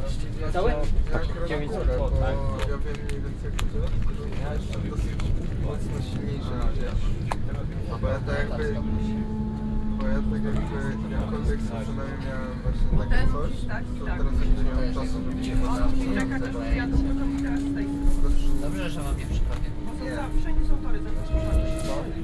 Railway Station, Katowice, Poland - (56) Buying train ticket
Buying train ticket at the Railway Station in Katowice.
binaural recording with Soundman OKM + Zoom H2n
sound posted by Katarzyna Trzeciak